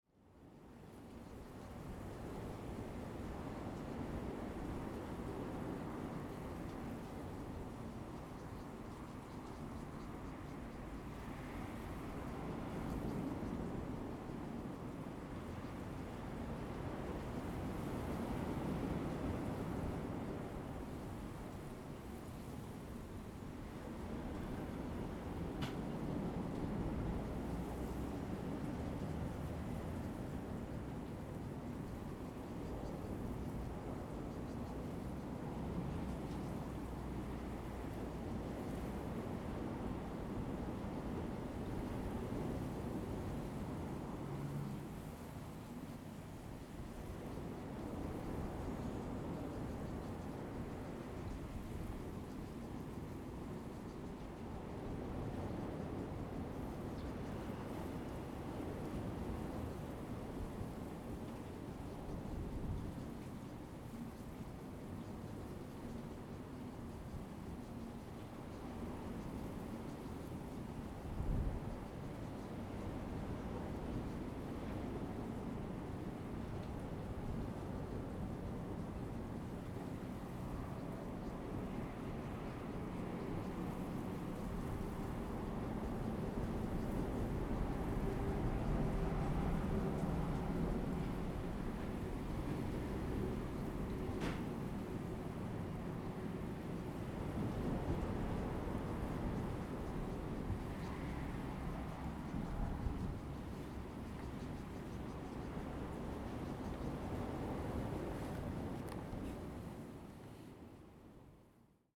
大鳥村, Dawu Township - the waves
In the lounge area, Sound of the waves, The weather is very hot
Zoom H2n MS +XY
Taitung County, Taiwan